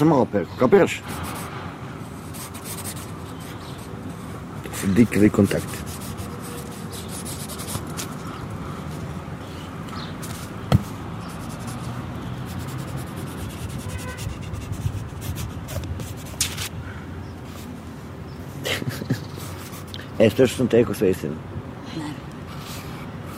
Kosancicev venac, Belgrade - Crtac (Artist)
14 June 2011, 15:23